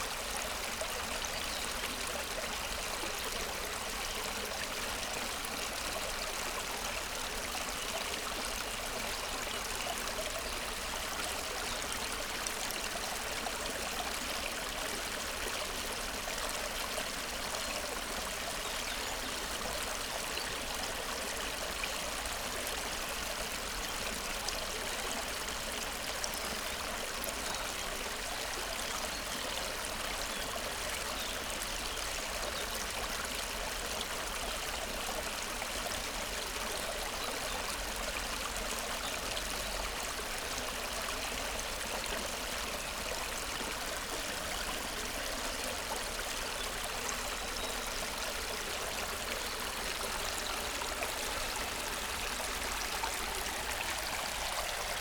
Thielenbruch, Köln, Deutschland - Strunde, Umbach
sound of small river Strunde in Thielenbruch forest. The Strunde was an important source of energy at the begining of industrialistion times, when the water was driving over 40 mills along its path.
(Sony PCM D50, DPA4060)
21 March 2019, 18:30